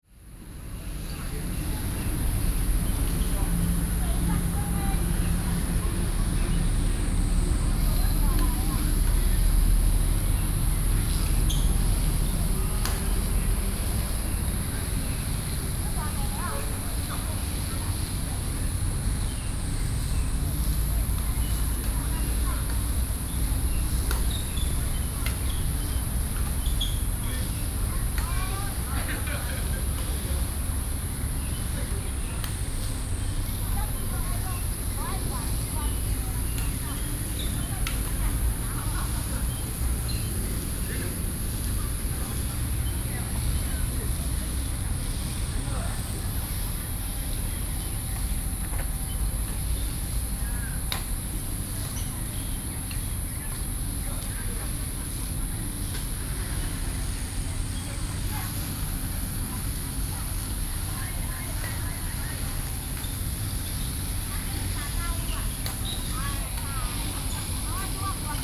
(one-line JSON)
{"title": "Perfection park, Taipei City - park", "date": "2012-06-23 07:55:00", "description": "Standing next to the embankment, Sony PCM D50 + Soundman OKM II", "latitude": "25.10", "longitude": "121.54", "altitude": "14", "timezone": "Asia/Taipei"}